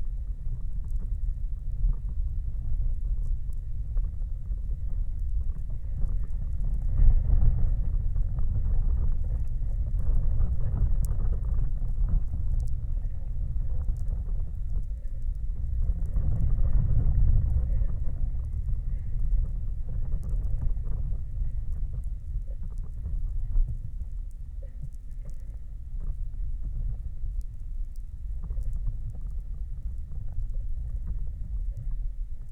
Open air sculpture park in Antalge village. There is a large exposition of metal sculptures and instaliations. Now you can visit and listen art. Multichannel recording using geophone, contact mics, hydrophone and electromagnetic antenna Priezor